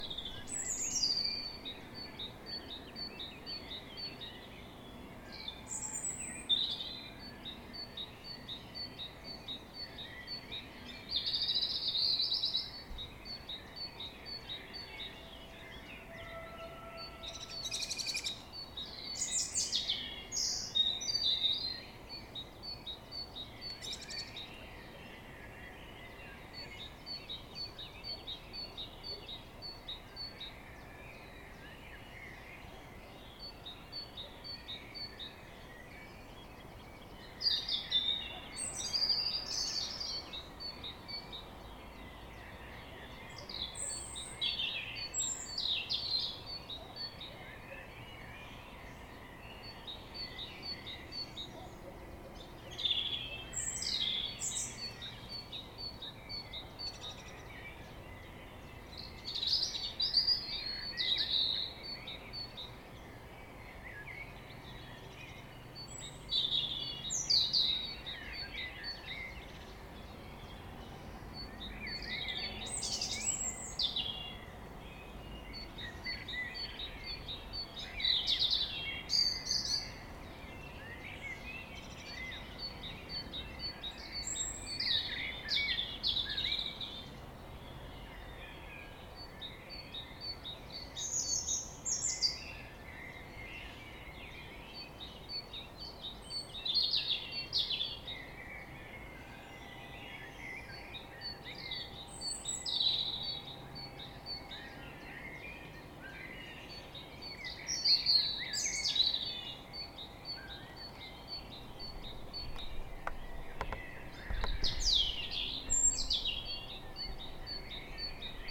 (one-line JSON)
{"title": "Egenolfstraße, Limburg an der Lahn, Deutschland - Vogelstimmen am Morgen", "date": "2022-05-13 05:30:00", "description": "Frühmorgendliches Vogelstimmenkonzert in der Egenolfstraße in Limburg an der Lahn", "latitude": "50.38", "longitude": "8.07", "altitude": "150", "timezone": "Europe/Berlin"}